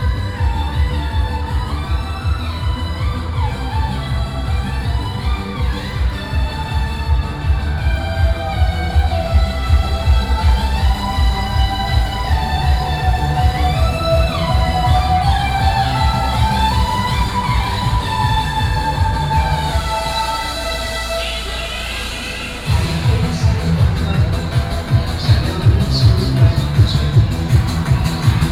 Yi 1st Rd., 基隆市仁愛區 - Traditional and modern variety shows
Festivals, Walking on the road, Traditional and modern variety shows, Keelung Mid.Summer Ghost Festival